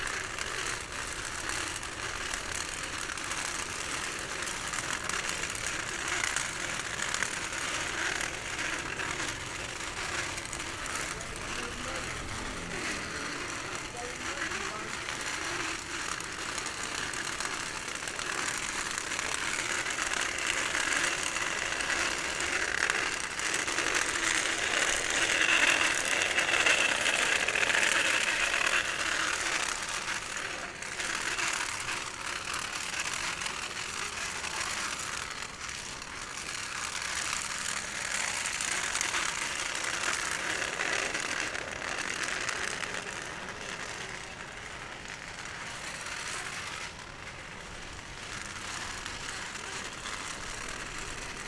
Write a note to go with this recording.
A senior is moving along the sidewalk with a walker missing two wheels producing this sound of metal scraping the concrete.